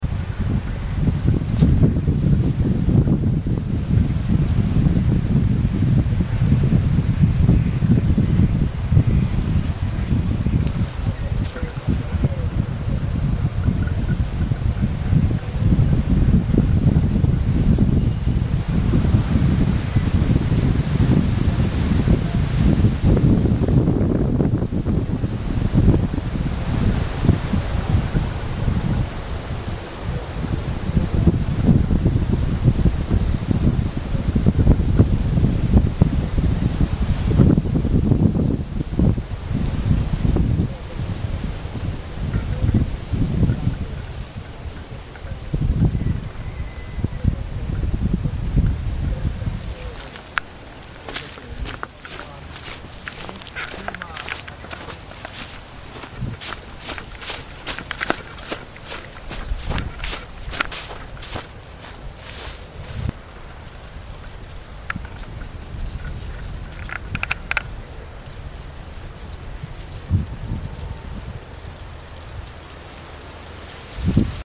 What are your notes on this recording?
vento tra il granoturco a Villastanza (settembre 2007)